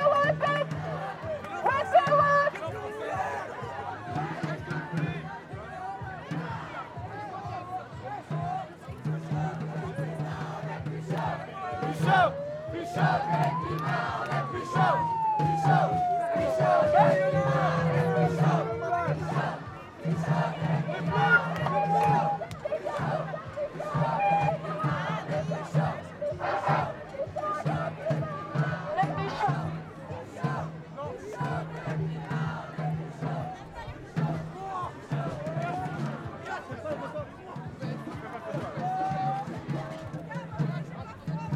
Boulevard Roi Albert II, Bruxelles, Belgique - Demonstration of young people for climate justice
Tech Note : Olympus LS5 internal microphones.
Brussel, Belgium, February 2019